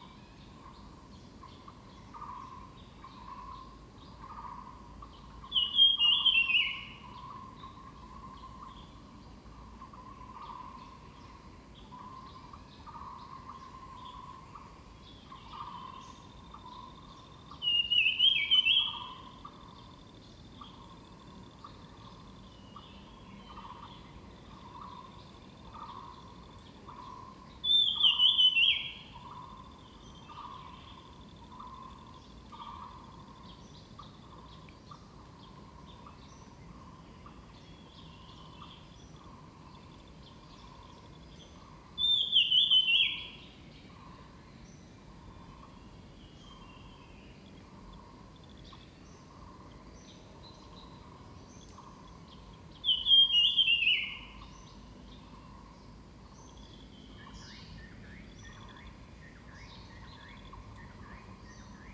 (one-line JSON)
{"title": "桃米里, Puli Township, Taiwan - In the woods", "date": "2016-05-06 06:32:00", "description": "Birds called, In the woods, Bell\nZoom H2n MS+XY", "latitude": "23.95", "longitude": "120.91", "altitude": "652", "timezone": "Asia/Taipei"}